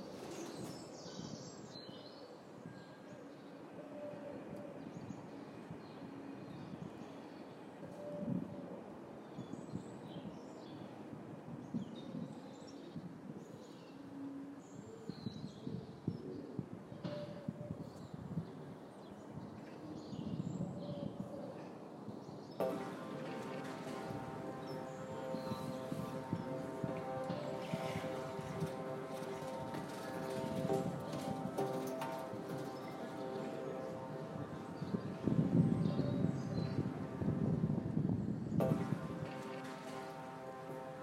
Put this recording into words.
I record a sound under this bridge which is the place where I will instal my new project about white noise.